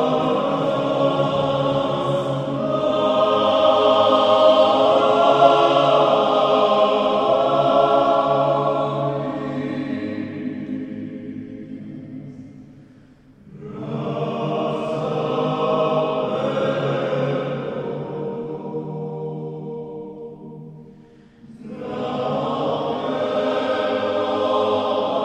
mens choir rehearsal in Church
mens choir rehearsal in saint Katarina Church
City of Zagreb, Croatia, 11 June 2010, ~20:00